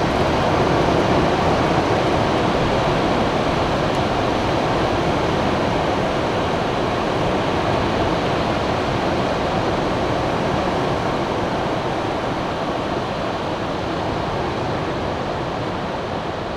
Big Tribune Bay, Hornby Island, BC, Canada - Heavy waves at high tide
Very large waves crashing in after sunset. Telinga stereo parabolic mic with Tascam DR-680mkII recorder.
15 August, 20:30